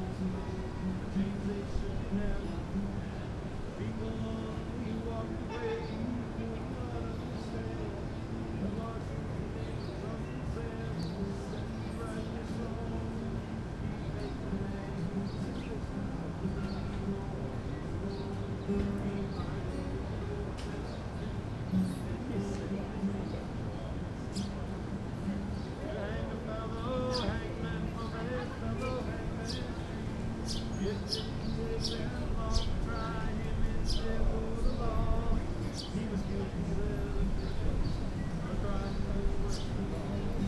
Pedestrian Mall, Iowa City
acoustic, guitar, talking, birds, birdsong
IA, USA, 18 July, ~22:00